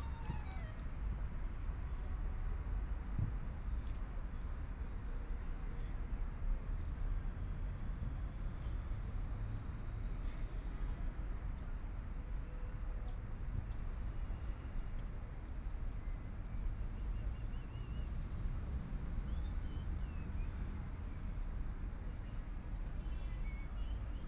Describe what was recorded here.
Traffic Sound, The sound traveling through the subway, Trafficking flute master, 're Playing flute sounds, Flute sounds, Zoom H6+ Soundman OKM II